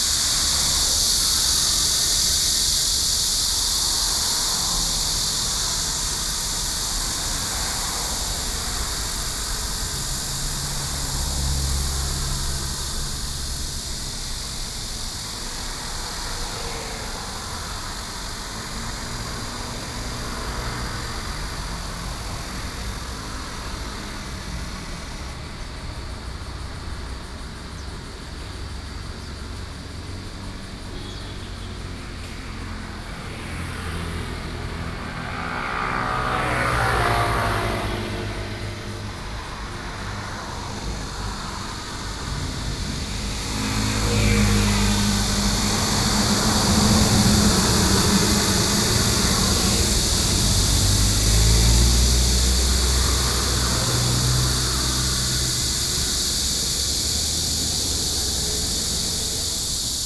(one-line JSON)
{"title": "Sec., Jiayuan Rd., Shulin Dist., New Taipei City - Cicada and traffic sounds", "date": "2012-07-08 10:32:00", "description": "Cicada sounds, Traffic Sound\nBinaural recordings, Sony PCM D50+Soundman okm", "latitude": "24.95", "longitude": "121.39", "altitude": "30", "timezone": "Asia/Taipei"}